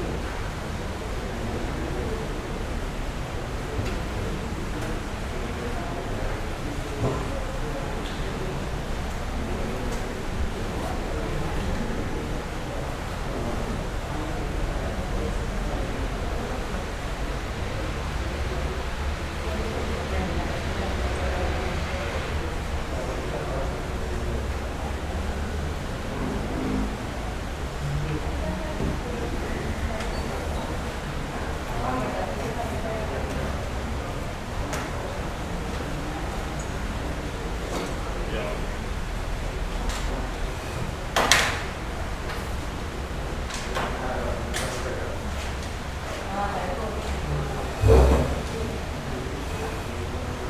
Cra., Medellín, Antioquia, Colombia - Ambiente Biblioteca UdeM
Descripción: Biblioteca de la Universidad de Medellín.
Sonido tónico: fuente, murmullos, sillas siendo arrastradas
Señal sonora: teclas de computador, pasos, hojas de cuaderno, llaves, persona tosiendo, abriendo y cerrando una cartuchera, poniendo lapiceros sobre la mesa.
Técnica: grabación con Zoom H6 y micrófono XY
Grupo: Luis Miguel Cartagena, María Alejandra Flórez, Alejandra Giraldo, Santiago Madera y Mariantonia Mejía
Región Andina, Colombia